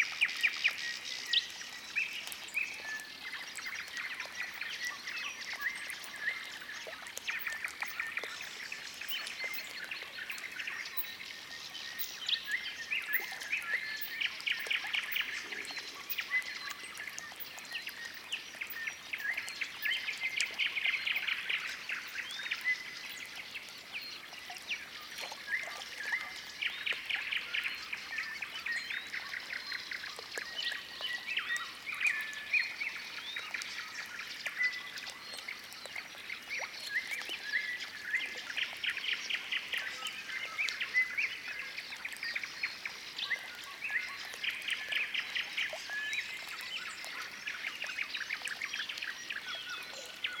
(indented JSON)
{
  "title": "Rapina Polder evening soundscape, Estonia",
  "date": "2011-05-29 01:40:00",
  "description": "made during a late May night time field recording excursion to the Rapina Polder",
  "latitude": "58.15",
  "longitude": "27.50",
  "altitude": "30",
  "timezone": "Europe/Tallinn"
}